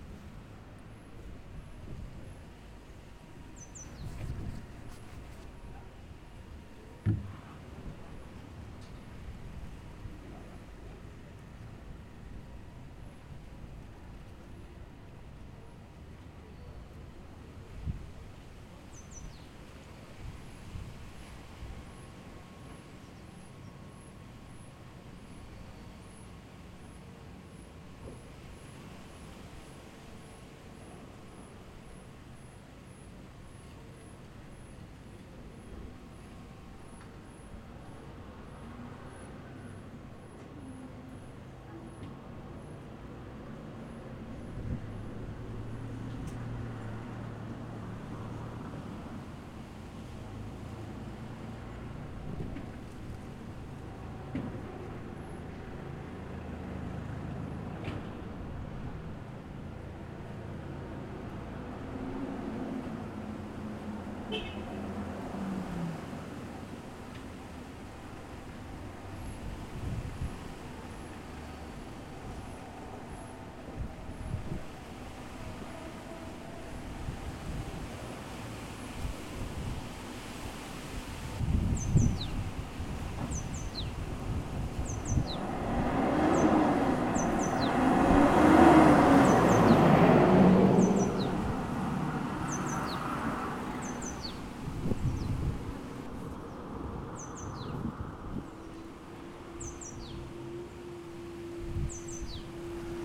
Carretera General Arico Viejo, Arico Viejo, Santa Cruz de Tenerife, Hiszpania - The center of Arico Viejo
An ambient from a town located on Tenerife Island recorded from a bench. Cars passing by. Birds tweeting. Recording starts from opening a can of beer and finishes when the beer is over.